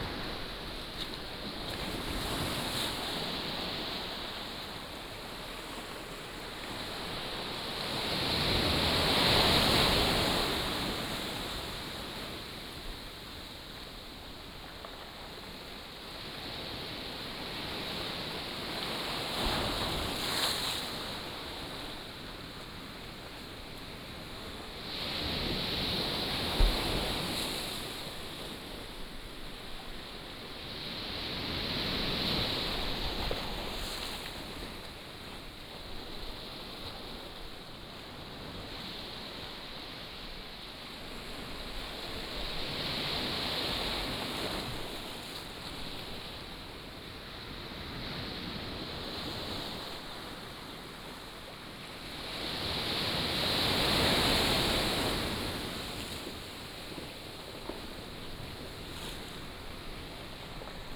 梅石村, Nangan Township - Sound of the waves
In front of the small temple, Sound of the waves